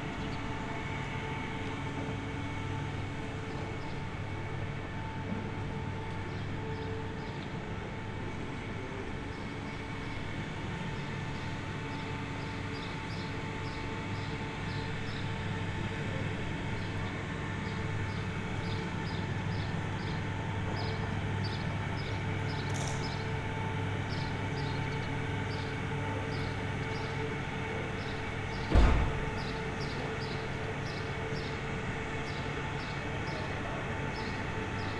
moving in between noises from various ventilations and air conditioners, in the yard behind UNI hotel
(SD702, Audio Technica BP4025)